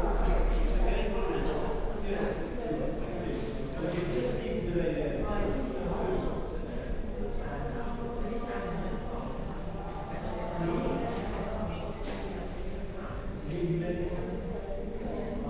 Ten minute meditation in St Marys Minster Church. Parishioners chat as they leave the eucharist service, a till bleeps as Christmas cards are sold for charity on one side of the nave. On the other side, tea and biscuits are offered to visitors (Spaced pair of Sennheiser 8020s with SD MixPre6).